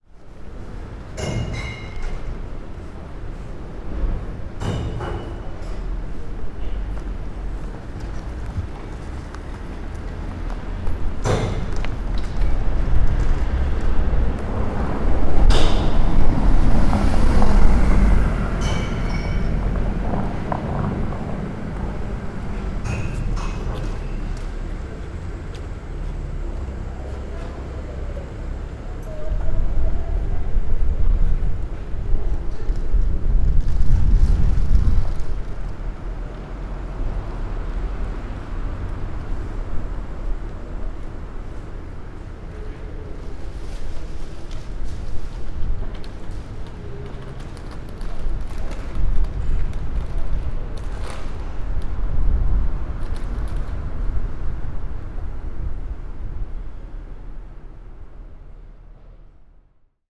{
  "title": "Collégiale Saint-Martin, Angers, France - (606) Throwing out glass bottles",
  "date": "2019-08-23 13:11:00",
  "description": "Throwing glass bottles into a recycling bin; ORTF recording.\nrecorded with Sony D100\nsound posted by Katarzyna Trzeciak",
  "latitude": "47.47",
  "longitude": "-0.55",
  "altitude": "53",
  "timezone": "Europe/Paris"
}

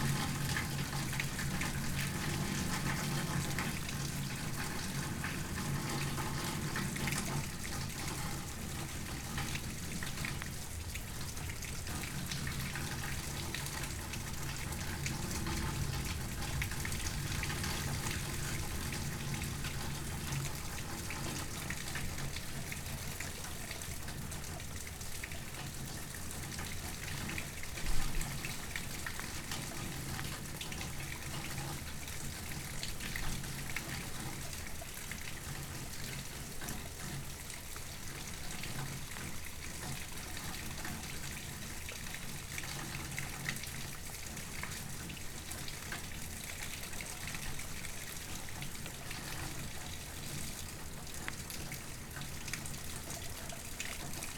{
  "title": "Waters Edge - Severe Warned Storm Part 2",
  "date": "2022-05-11 21:33:00",
  "description": "A line of severe warned storms came across the metro in the evening which put us under a Sever Thunderstorm warning and a Tornado warning for the adjacent county. The outdoor warning sirens can be heard early in the recording for the Severe Thunderstorm warning and then later from the adjacent county for the tornado warning. Rainfall rates at the beginning of the storm were measured by my weather station at 8.6 inches per hour and we got about 1.25 inches in a half hour. Luckily we didn't get much wind so there was no damage.",
  "latitude": "45.18",
  "longitude": "-93.00",
  "altitude": "278",
  "timezone": "America/Chicago"
}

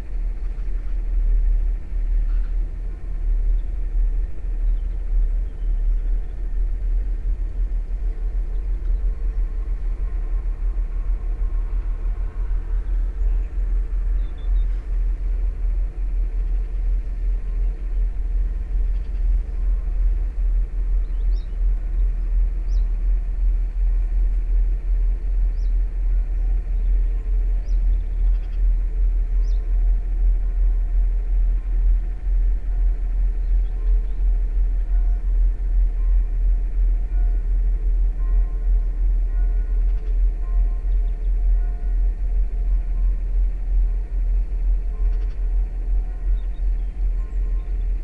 {
  "title": "Saint-Pierre-la-Garenne, France - Boat",
  "date": "2016-09-21 19:00:00",
  "description": "A tourist boat is passing by on the Seine river. It's the Nicko cruises, transporting german people.",
  "latitude": "49.16",
  "longitude": "1.39",
  "altitude": "13",
  "timezone": "Europe/Paris"
}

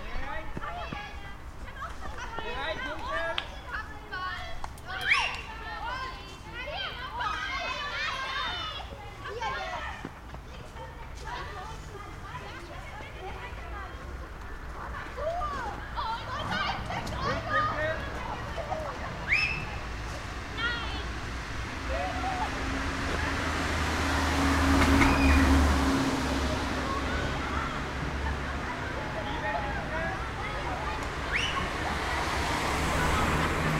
{
  "title": "leipzig lindenau, sportanlage friesenstraße",
  "date": "2011-09-01 12:35:00",
  "description": "sportfest mit kindern in der sportanlage friesenstraße. kinder und sportlehrer, autos, eichenlaub raschelt am mikrophon.",
  "latitude": "51.34",
  "longitude": "12.33",
  "timezone": "Europe/Berlin"
}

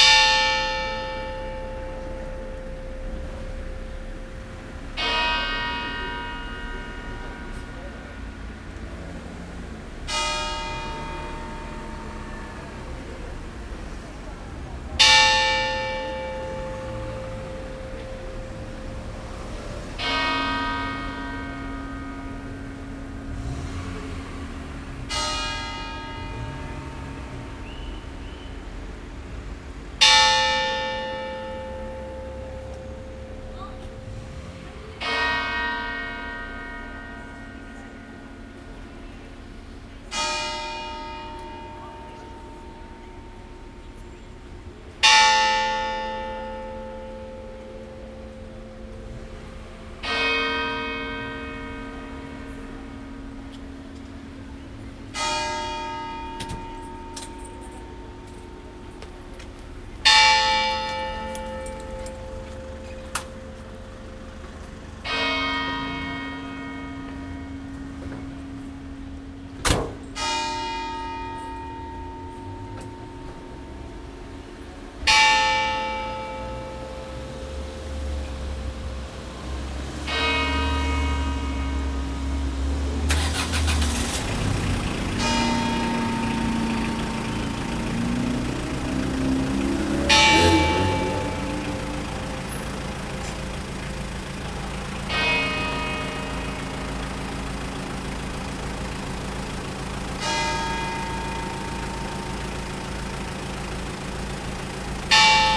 {
  "title": "Ambert, Saint-Jean Place, Knell",
  "date": "2010-08-17 10:23:00",
  "description": "France, Auvergne, Funeral, Knell, Bells",
  "latitude": "45.55",
  "longitude": "3.74",
  "altitude": "533",
  "timezone": "Europe/Paris"
}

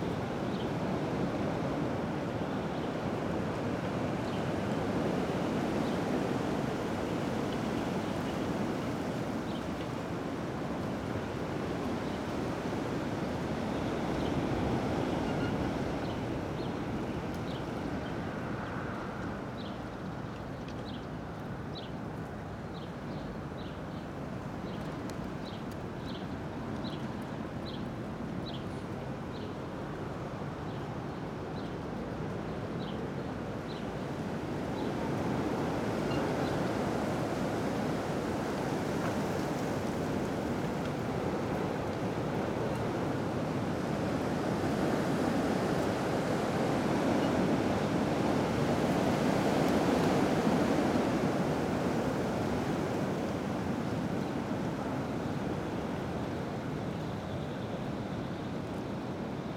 {
  "title": "Berlin, Görlitzer Ufer - wind in trees",
  "date": "2011-04-08 19:30:00",
  "description": "strong wind in trees at Görlitzer Park, Berlin",
  "latitude": "52.49",
  "longitude": "13.44",
  "altitude": "35",
  "timezone": "Europe/Berlin"
}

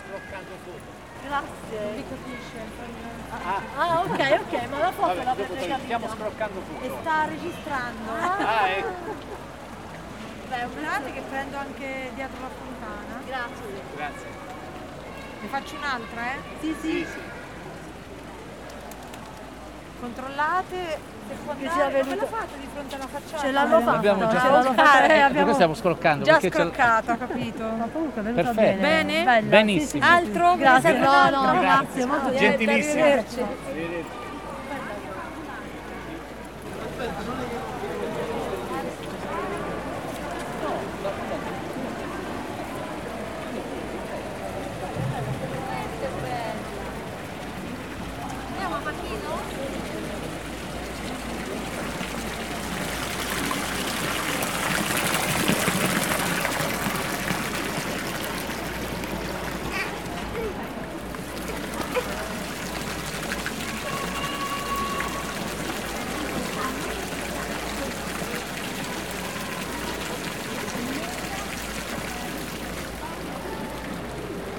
{
  "title": "Loreto AN, Italie - shrine of Loreto, santuaire de Loreto",
  "date": "2015-10-15 15:30:00",
  "description": "The bells of the Shrine of Loreto, tourists who ask you take a picture, a little girl playing near the fountain.\nles cloches du sanctuaire de Loreto, des touristes qui demandent qu'on les prennent en photos, une petite fille qui joue près de la fontaine",
  "latitude": "43.44",
  "longitude": "13.61",
  "altitude": "124",
  "timezone": "Europe/Rome"
}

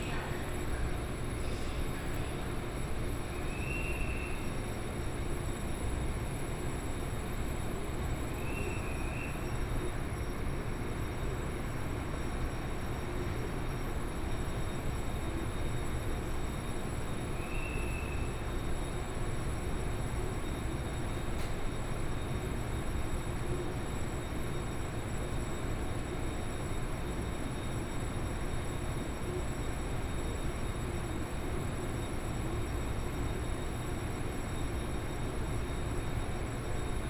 {
  "title": "Taipei Main Station, Taiwan - In the station platform",
  "date": "2014-01-15 08:41:00",
  "description": "In the station platform, Zoom H4n + Soundman OKM II",
  "latitude": "25.05",
  "longitude": "121.52",
  "altitude": "19",
  "timezone": "Asia/Taipei"
}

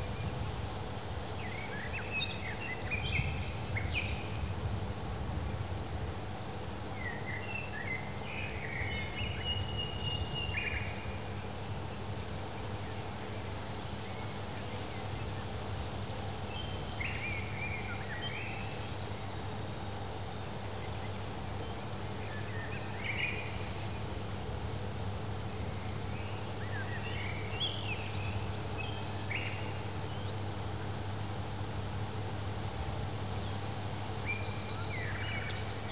{
  "title": "Morasko, UAM university campus - evening forest space",
  "date": "2014-05-24 21:32:00",
  "description": "recording evening ambience of a forest patch behind the UAM campus. birds spacing their calls. some timid frog gobble. had to crank up the gain to get at least some field depth so the recording is flooded with mic self-noise. deep down there is a low freq drone coming from many power stations around.",
  "latitude": "52.47",
  "longitude": "16.92",
  "altitude": "96",
  "timezone": "Europe/Warsaw"
}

